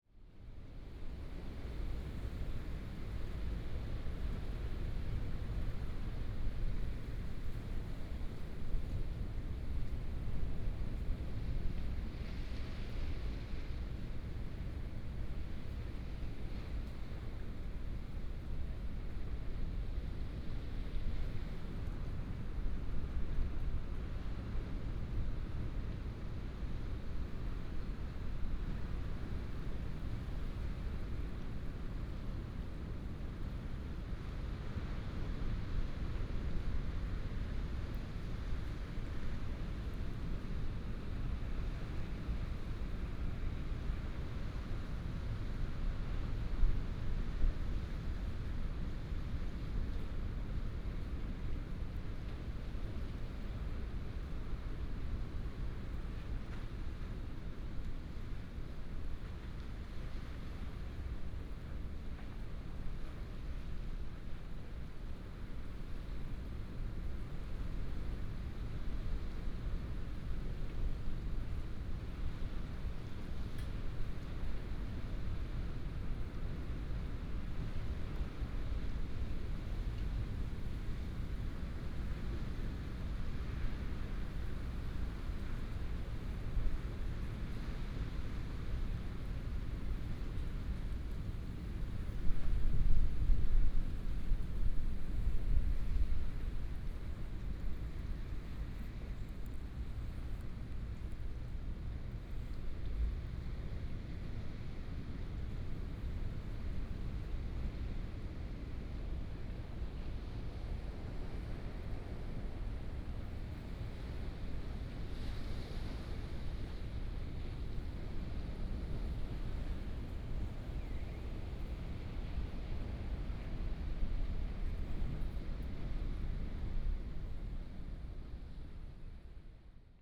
旭海漁港, Mudan Township - In the fishing port
In the fishing port, Sound of the waves